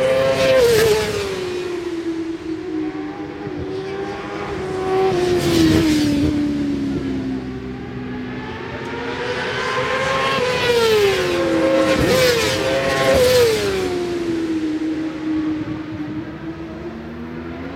{"title": "Brands Hatch GP Circuit, West Kingsdown, Longfield, UK - WSB 1998 ... Superbikes ... Qual ...", "date": "1998-08-01 11:30:00", "description": "WSB 1998 ... Superbikes ... Qual (contd) ... one point stereo mic to minidisk ...", "latitude": "51.35", "longitude": "0.26", "altitude": "151", "timezone": "Europe/London"}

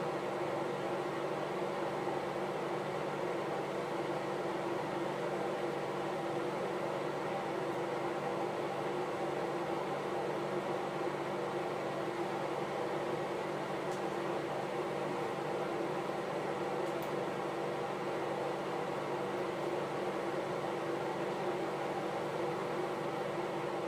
{"title": "University Hill, Boulder, CO, USA - Bathroom Cleaning", "date": "2013-01-28 12:30:00", "latitude": "40.01", "longitude": "-105.28", "altitude": "1674", "timezone": "America/Denver"}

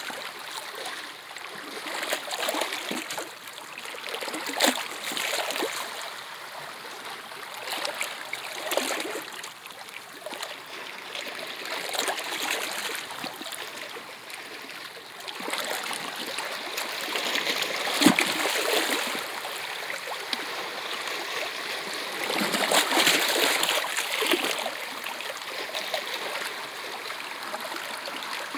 {"title": "Cap de l'Horta, Alicante, Spain - (11 BI) Waves on rocks", "date": "2016-11-04 14:20:00", "description": "Binaural recording laying down on rocks at Cap de l'Horta.\nRecorded with Soundman OKM on Zoom H2n.", "latitude": "38.35", "longitude": "-0.40", "timezone": "Europe/Madrid"}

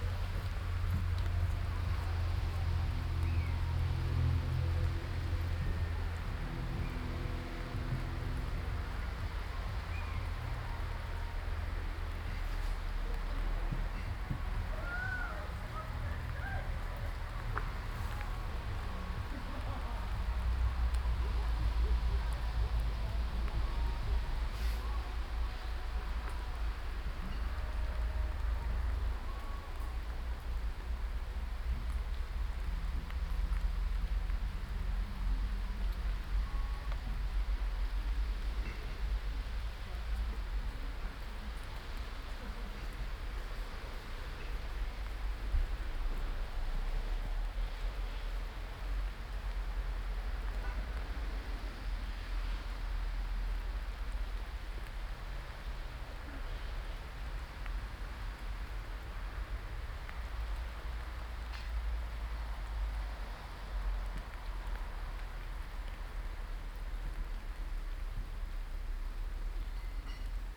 {"title": "berlin, schwarzer kanal, people - berlin, schwarzer kanal, rain, people", "date": "2011-08-04 16:10:00", "description": "rain, dogs people, binaural recording", "latitude": "52.48", "longitude": "13.46", "altitude": "34", "timezone": "Europe/Berlin"}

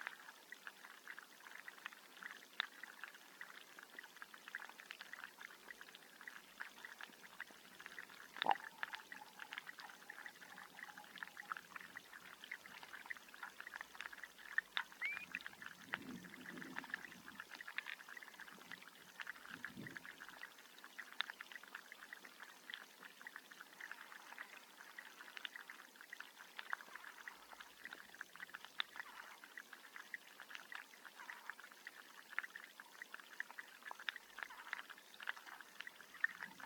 {"title": "Antalieptė, Lithuania, autumn underwater", "date": "2020-09-26 16:25:00", "description": "sunny autumn day. hydrophone.", "latitude": "55.65", "longitude": "25.89", "altitude": "143", "timezone": "Europe/Vilnius"}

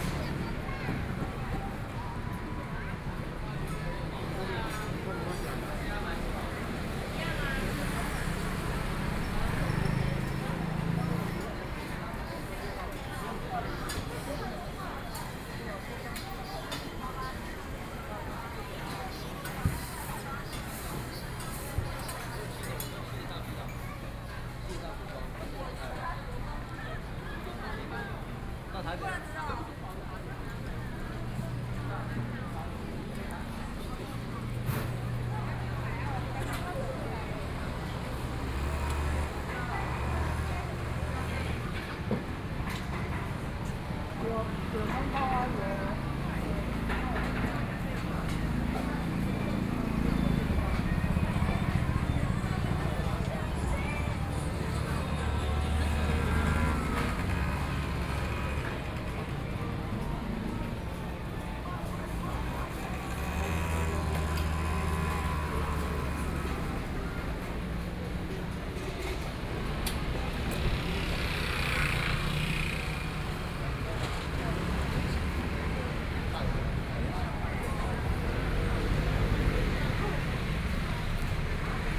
{"title": "Neihu Rd., Taipei City - Night market", "date": "2014-03-15 17:48:00", "description": "Walk in the park, Traffic Sound, Night market, A variety of shops and tapas\nBinaural recordings", "latitude": "25.08", "longitude": "121.58", "timezone": "Asia/Taipei"}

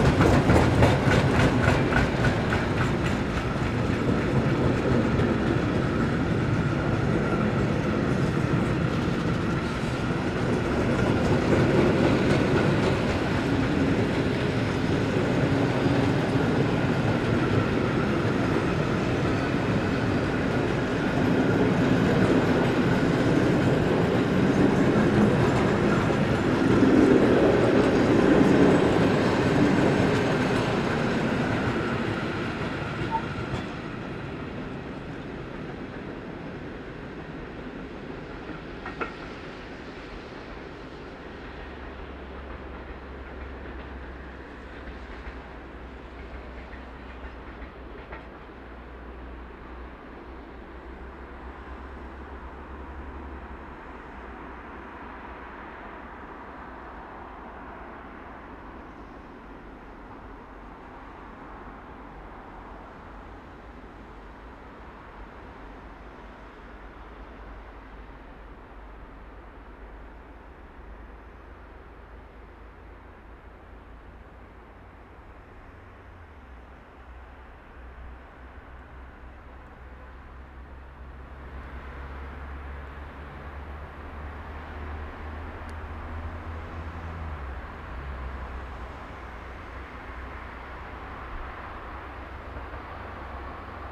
{"title": "Olsztyn, Polska - West train station (4)", "date": "2013-02-08 22:19:00", "description": "Train announcement. Train arrival, departure and at the same momoent cargo train is passing by.", "latitude": "53.78", "longitude": "20.47", "altitude": "113", "timezone": "Europe/Warsaw"}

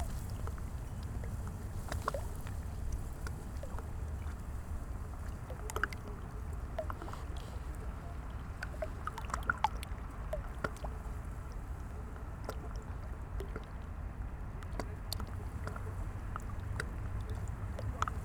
{"title": "Spree, Baumschulenweg, Berlin - river side ambience", "date": "2018-12-30 12:20:00", "description": "Britzer Verbindungskanal meets river Spree, Sunday river side ambience\n(Sony PCM D50, DPA4060)", "latitude": "52.47", "longitude": "13.50", "altitude": "33", "timezone": "Europe/Berlin"}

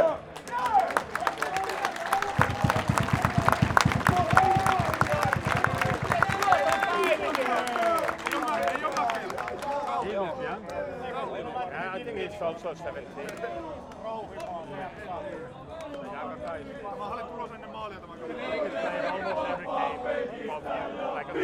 {"title": "Raatin stadion, Oulu, Finland - AC Oulu supporters celebrating a goal", "date": "2020-08-01 18:41:00", "description": "AC Oulu supporters celebrating a game winning goal scored by the home team at the final moments of the first-division match against Jaro. Zoom H5, default X/Y module.", "latitude": "65.02", "longitude": "25.46", "altitude": "1", "timezone": "Europe/Helsinki"}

